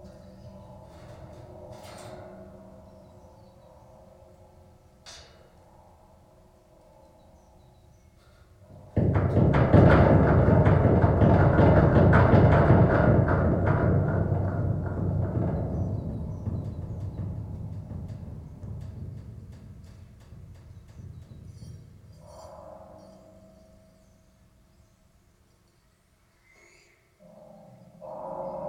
playing a wire fence in Macka park.
20 February, ~14:00